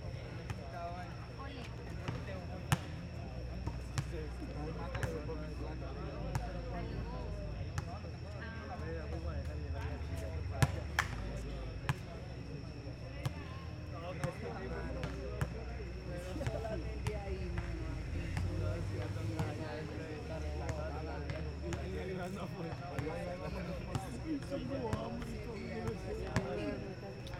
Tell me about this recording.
Toma de audio / paisaje sonoro de la cancha de baloncesto de Los Alpes realizada con la grabadora Zoom H6 y el micrófono XY a 120° de apertura a las 8:40 pm aproximadamente. Cantidad media de personas al momento de la grabación, se puede apreciar el sonido de las personas hablando en las graderías, el pasar de unas motocicletas y el sonido de un balón de baloncesto rebotando a pocos metros de la grabadora. Sonido tónico: Personas hablando. Señal sonora: Motocicleta pasando.